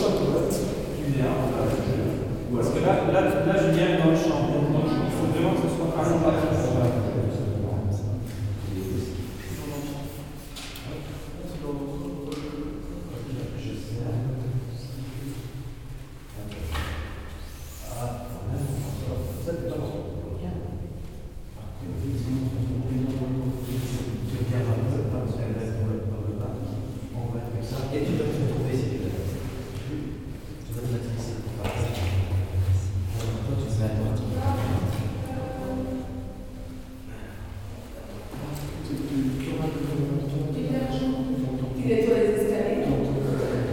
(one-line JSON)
{"title": "Namur, Belgium - Emines bunker", "date": "2017-11-19 14:50:00", "description": "Some students are making a short film, in the called Émines bunker. There's a lot of underground bunkers near the Namur city. Students are talking about their project in a wide room, with a lot of reverb. Bunker is abandoned since the WW2.", "latitude": "50.51", "longitude": "4.85", "altitude": "187", "timezone": "Europe/Brussels"}